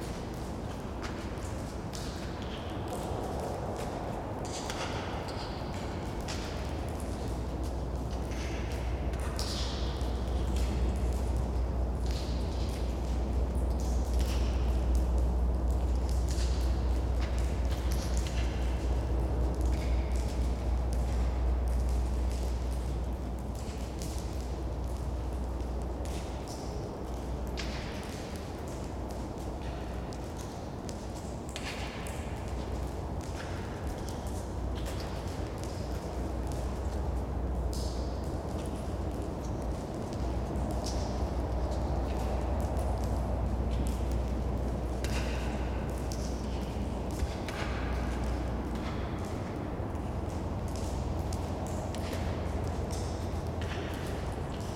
Drips inside bunker, Sm?rde, Latvia - Drips inside bunker, Tukums soviet air base
Drips inside bunker of the Tukums former soviet air base
10 March 2012